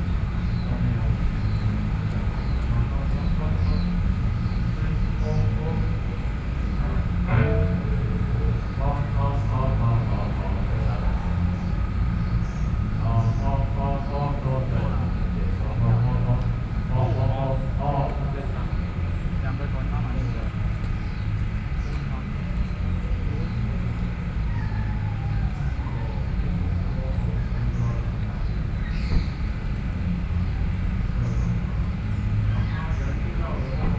{"title": "Huashan 1914 Creative Park - Holiday", "date": "2013-08-17 15:57:00", "description": "Noise generator, Concert is testing the microphone, Visitor, Sony PCM D50 + Soundman OKM II", "latitude": "25.04", "longitude": "121.53", "altitude": "10", "timezone": "Asia/Taipei"}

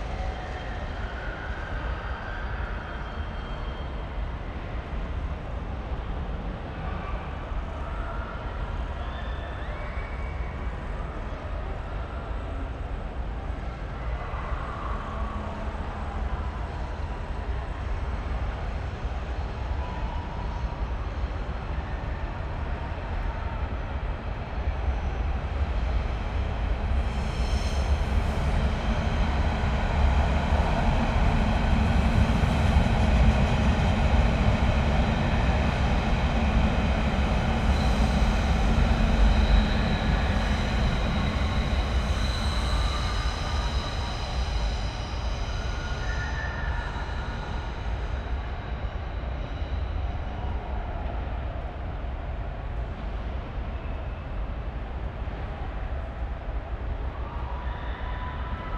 {"title": "Berlin, Littenstr., courtyard", "date": "2011-12-22 21:05:00", "description": "Berlin, Littenstr. courtyard, sounds and echos of the christmas fun fair vis-a-vis.\n(tech note: SD702, NT1a A-B 60cm)", "latitude": "52.52", "longitude": "13.41", "altitude": "41", "timezone": "Europe/Berlin"}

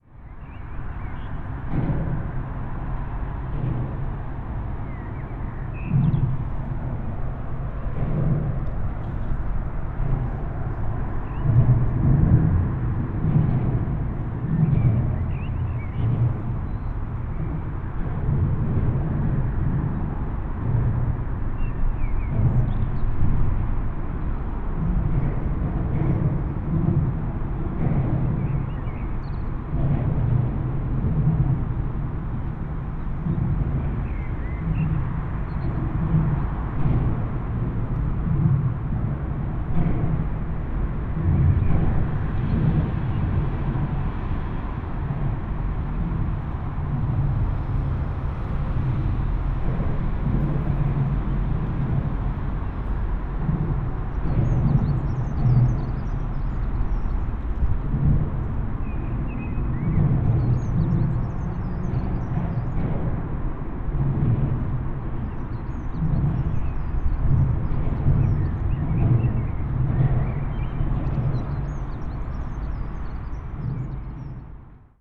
{"title": "Zoobrücke, Deutz, Köln - drones under bridge", "date": "2012-05-14 19:40:00", "description": "a lot of noise and car percussion under the brigde\n(tech: Olympus LS5 + Primo EM172)", "latitude": "50.95", "longitude": "6.99", "altitude": "49", "timezone": "Europe/Berlin"}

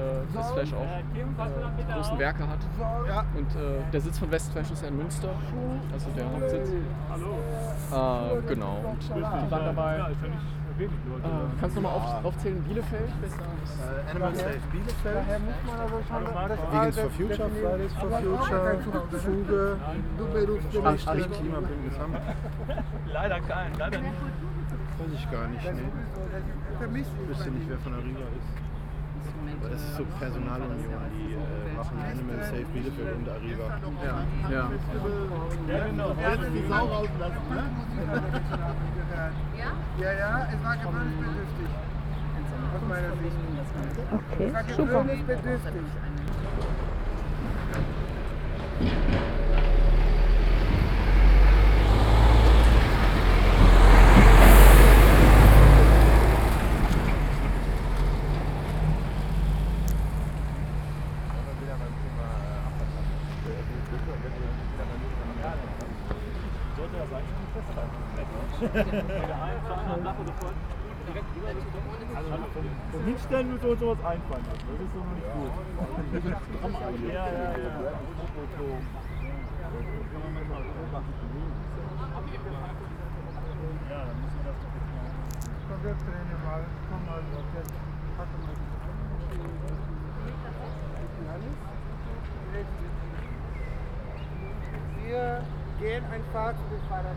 {"title": "Kranstraße, Hamm, Germany - Mahnwache bei Westfleisch Hamm-Uentrop", "date": "2022-06-04 11:35:00", "description": "Mahnwache gegen die geplante Erweiterung bei Westfleisch in Hamm-Uentrop.", "latitude": "51.69", "longitude": "7.95", "altitude": "66", "timezone": "Europe/Berlin"}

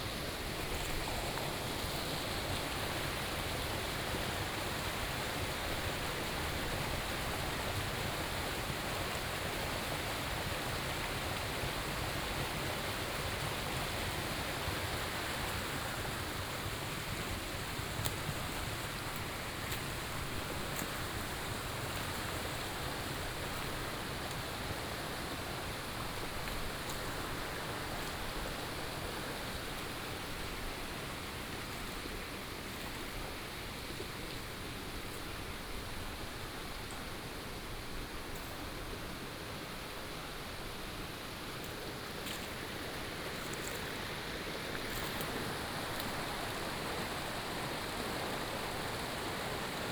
{"title": "Zhonggua Rd., Puli Township - Walking along the river", "date": "2016-04-21 11:16:00", "description": "Walking along the river", "latitude": "23.95", "longitude": "120.91", "altitude": "576", "timezone": "Asia/Taipei"}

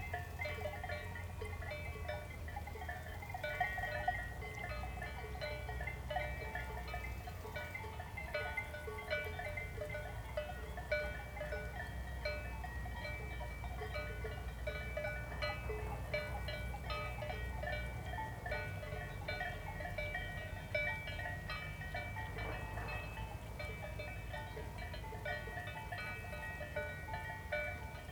{"title": "Grub, Schweiz - Hohe Höhe - On the ridge, distant cow bells", "date": "2015-08-22 18:34:00", "description": "[Hi-MD-recorder Sony MZ-NH900, Beyerdynamic MCE 82]", "latitude": "47.44", "longitude": "9.52", "altitude": "956", "timezone": "Europe/Zurich"}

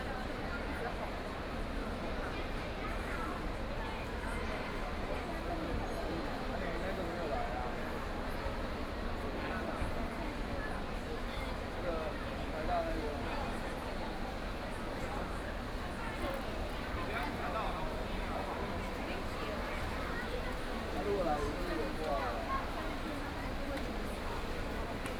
{"title": "Tamkang University, New Taipei City - Swimming Competition", "date": "2013-11-17 11:03:00", "description": "Swimming Competition, Elementary school swim race, Sitting in the audience of parents and children, Binaural recordings, Zoom H6+ Soundman OKM II", "latitude": "25.17", "longitude": "121.45", "altitude": "55", "timezone": "Asia/Taipei"}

